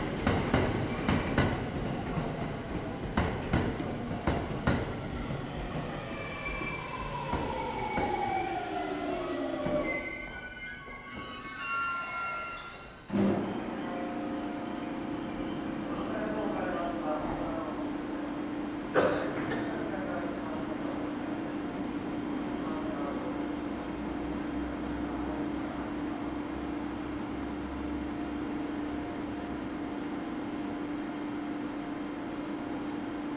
Chome Kanda Surugadai, Chiyoda-ku, Tōkyō-to, Japonia - Ochanomizu Station
One of Tokyo's busy railway stations, also one of the most wonderful views in the city. Recorded witz Zoom H2n